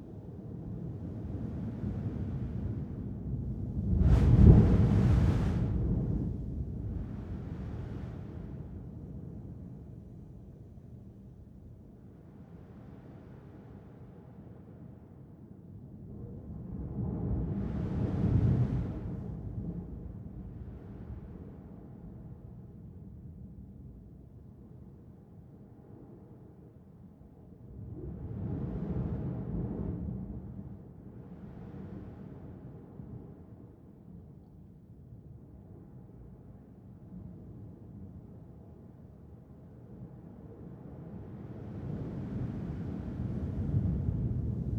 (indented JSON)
{
  "title": "Ana'ana Point, Tamakautoga, Niue - Ana'ana Point Blowhole",
  "date": "2012-06-14 20:00:00",
  "latitude": "-19.09",
  "longitude": "-169.94",
  "altitude": "21",
  "timezone": "Pacific/Niue"
}